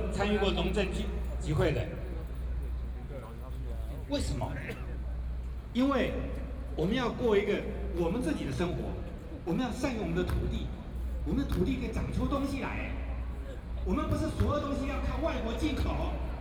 Jinan Rd., Taipei City - Sit still
Walking through the site in protest, People and students occupied the Legislative Yuan
Binaural recordings
Zhongzheng District, Taipei City, Taiwan